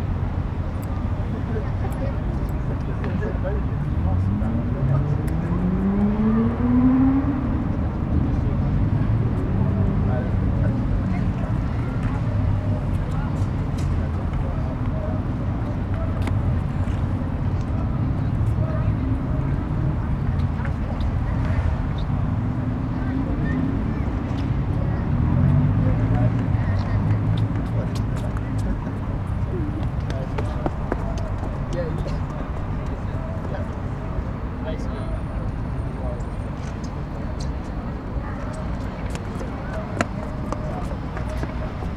London, street life facing Royal Albert Hall
life ov Queens gate street
London, UK, 7 May 2011, 17:35